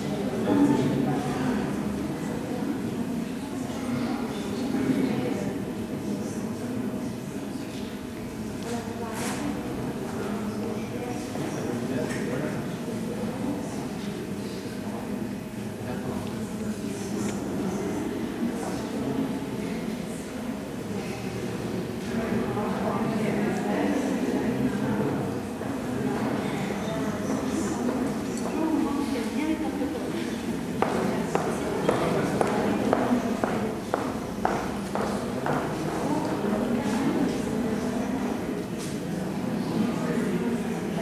Place des Célestins, Lyon, France - Théâtre des Célestins - Theater hall
People waiting, talking, before going to the theater.
Tech Note : Sony ECM-MS907 -> Minidisc recording.